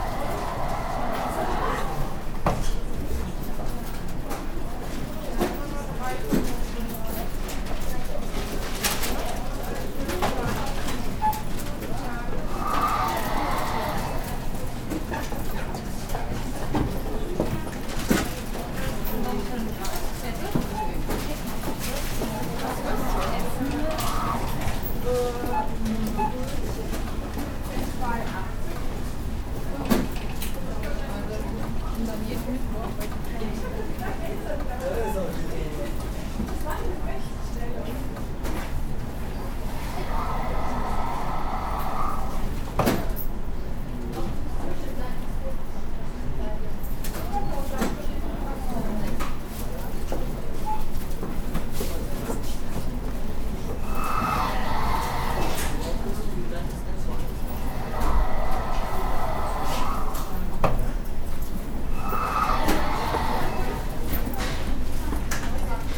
cologne, gürzenich str. drugstore
inside a german drugstore, the beep of the cash scanner, steps and the pneumatic doors
soundmap nrw - social ambiences and topographic field recordings